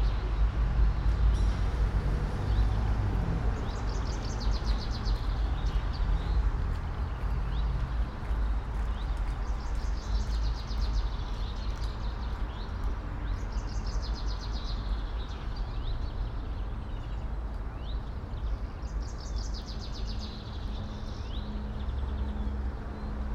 all the mornings of the ... - mar 6 2013 wed